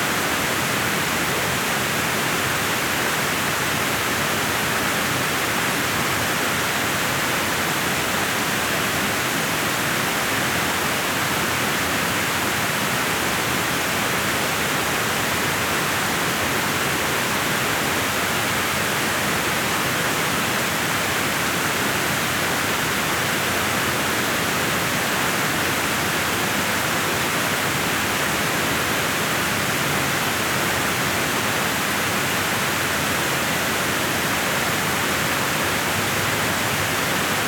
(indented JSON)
{
  "title": "W 49th St, New York, NY, USA - Waterfall Tunnel, NYC",
  "date": "2022-08-23 16:30:00",
  "description": "Sounds from the Mini Plexiglass Waterfall Tunnel in Midtown.",
  "latitude": "40.76",
  "longitude": "-73.98",
  "altitude": "19",
  "timezone": "America/New_York"
}